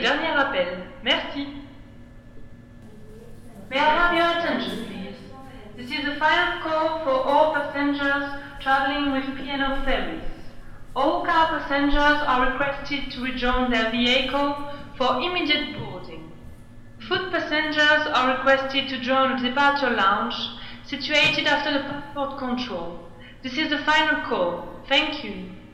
Calais departures
arrival and departure
18 May, 20:18